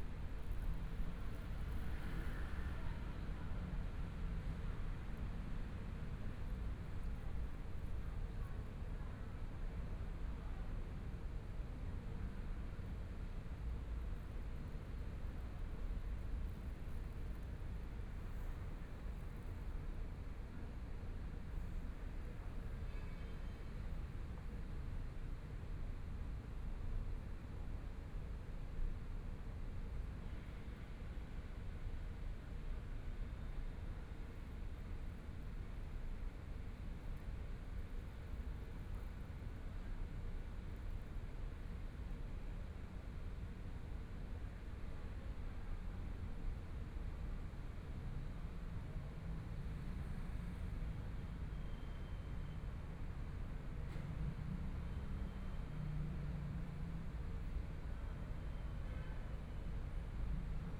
SPOT-Taipei Film House, Taipei - Environmental sounds
Environmental sounds, walking on the Road, Traffic Sound, Binaural recordings, Zoom H4n+ Soundman OKM II
6 February 2014, 14:18